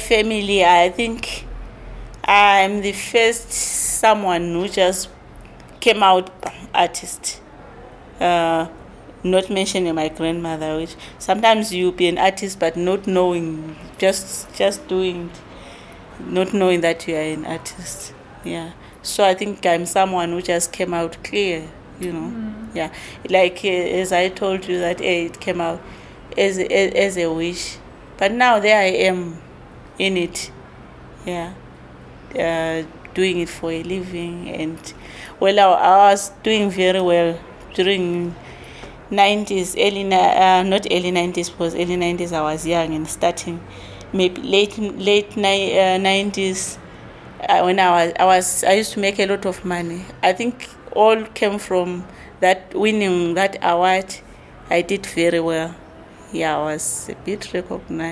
NGZ back-yard, Makokoba, Bulawayo, Zimbabwe - Nonhlanhla - you got your hands you can survive…
With nineteen, Nonhlanhla won an award in the Anglo-American Arts Exhibition. Respect and recognition brought customers to her studio; she was one of the few women being fortunate making a living of her work. And even through difficult times, she always managed to support herself and her family through her artwork.
26 October, 16:37